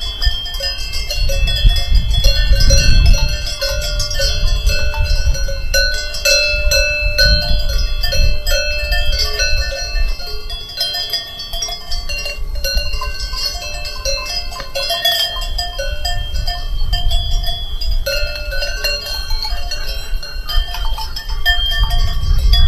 Le Plan/ Haut Combloux: - Le Plan/ Haut Combloux: Walking in the Mtn forest and fields listening to cloches de vache (cow bells)